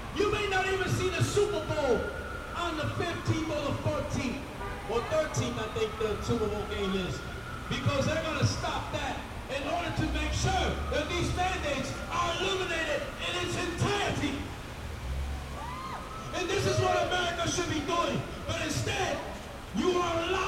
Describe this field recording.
A small group of protesters stands against masks and COVID-19 vaccines mandates. Using conspiracy theory rhetoric, a man with a megaphone shares his views on mandates.